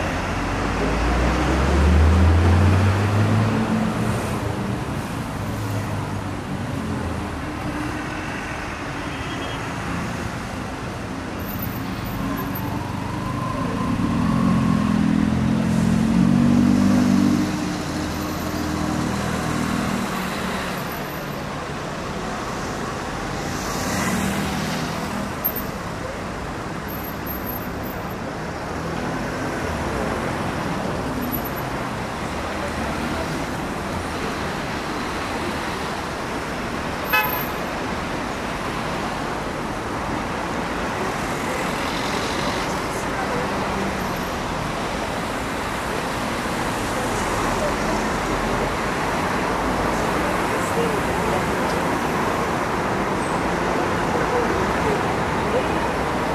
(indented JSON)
{
  "title": "Fullmoon Nachtspaziergang Part V",
  "date": "2010-10-23 22:26:00",
  "description": "Fullmoon on Istanbul, walking into Büyükdere Caddesi crossroads in Şişli.",
  "latitude": "41.06",
  "longitude": "28.99",
  "altitude": "108",
  "timezone": "Europe/Istanbul"
}